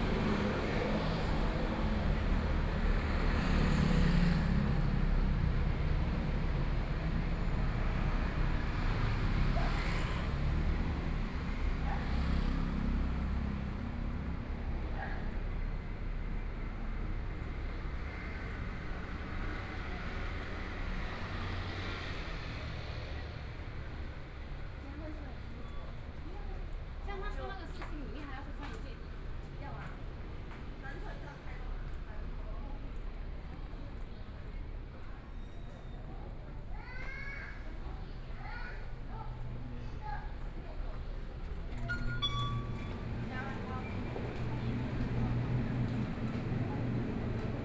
Walking on the street, Traffic Sound, Various shops, Convenience Store
Yancheng District, Kaohsiung City, Taiwan, 13 May 2014